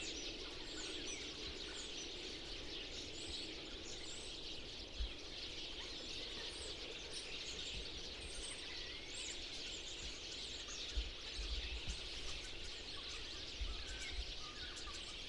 Suchy Las, road surrounding the landfill site - approaching a group of sparrows
a few bushes buzzling with sparrows from the distance
Poland, 3 February